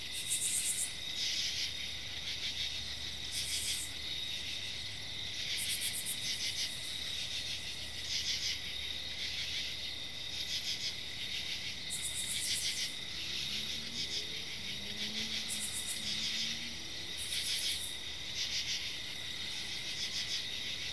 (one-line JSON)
{"title": "Serpentine Trail, Owings Mills, MD, USA - Serpentine Trail", "date": "2019-09-09 10:03:00", "description": "An orchestra of crickets and cicadas play out in the night, an hour northwest from Baltimore.", "latitude": "39.41", "longitude": "-76.84", "altitude": "185", "timezone": "America/New_York"}